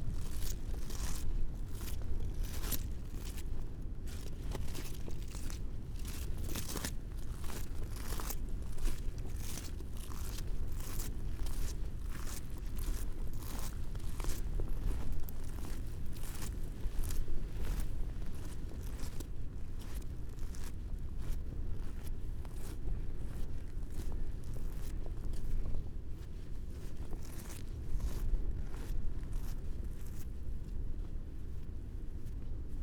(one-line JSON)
{"title": "Budle Cottages, Bamburgh, UK - walking the parabolic ... on a beach ...", "date": "2019-11-05 06:20:00", "description": "walking the parabolic ... on a beach ... parabolic ... flapping trousers ... small stream ... walking on ... bird call ... curlew ...", "latitude": "55.61", "longitude": "-1.76", "altitude": "1", "timezone": "Europe/London"}